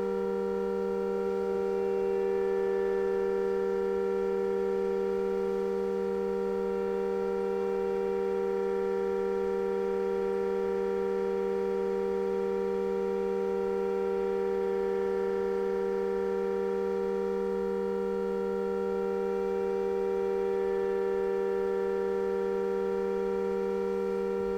Sound Room In Marjaniemi, Hailuoto, Finnland - line tilt installation 01